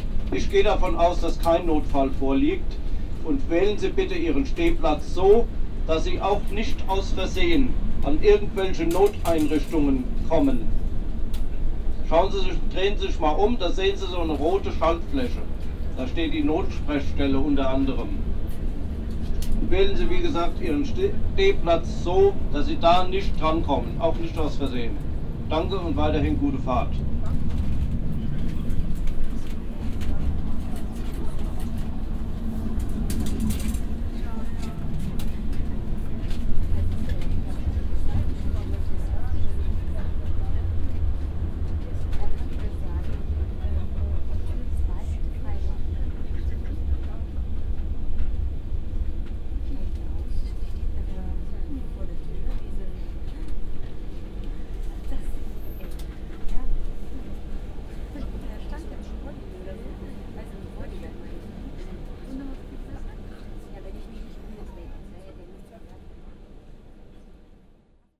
cologne, in strassenbahn, nächster halt heumarkt
in strassenbahn - haltestellenansgae und durchsage des fahrers
soundmap nrw: social ambiences/ listen to the people - in & outdoor nearfield recordings
21 January 2009